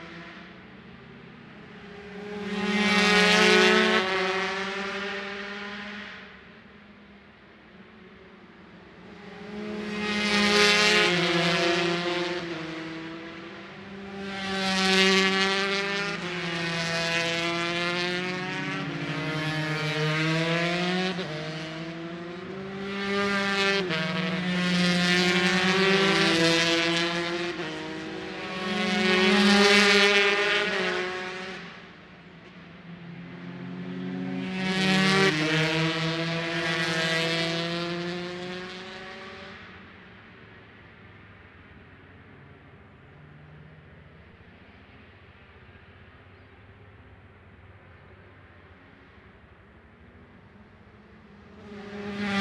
Brands Hatch GP Circuit, West Kingsdown, Longfield, UK - british superbikes 2007 ... 125 practice ...
british superbikes ... 125 practice ... one point stereo mic to minidisk ... time approx ...